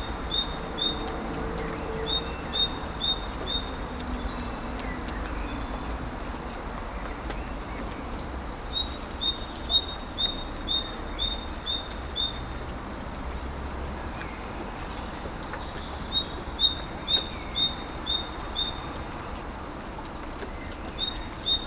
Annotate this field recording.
Early on the morning, it's raining a little bit, the day is awakening slowly and birds are singing.